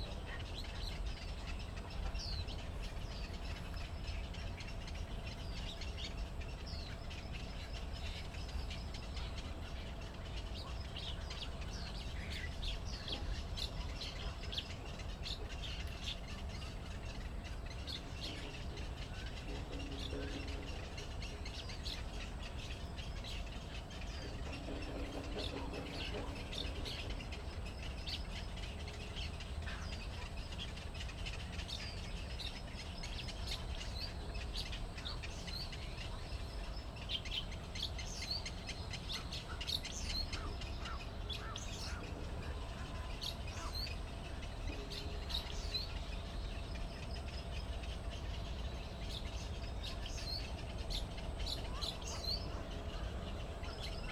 羅東林業文化園區, Luodong Township - Birdsong
Birdsong, in the Park
Zoom H6 MS+ Rode NT4